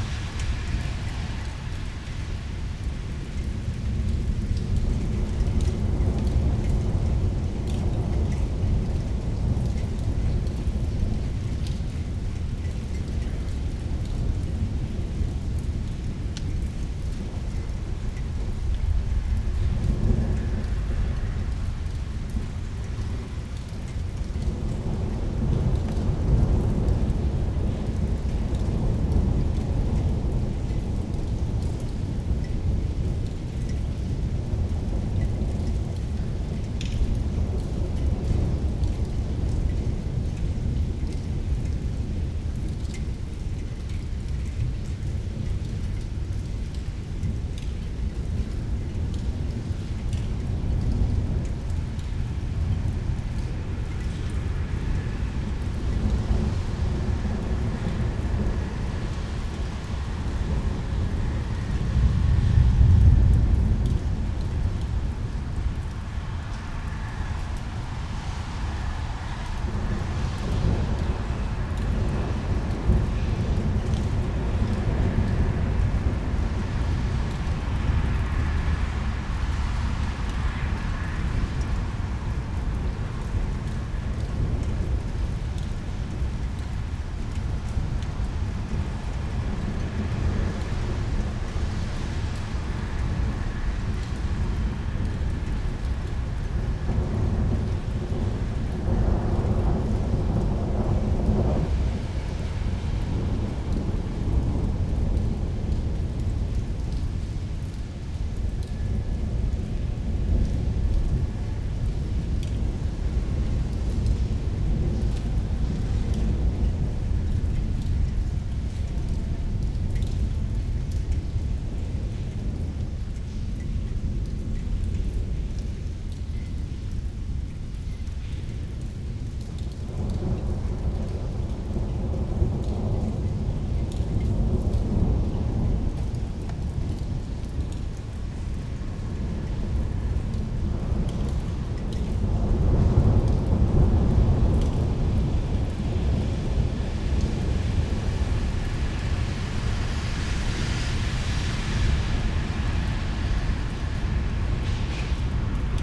Calle Eduardo Cano, Sevilla, Spain - Rain & Rolling Thunder in Seville
A recording showing that it does actually rain in Seville. The last few the nights have featured thunder & lightning storms, quite tropical as the temperatures rise. Recorded on a balcony sheltered from the rain.
Recorder - Zoom H4N. Microphones - pair of Uši Pro by LOM, technique used; Spaced AB
26 April 2018, ~00:00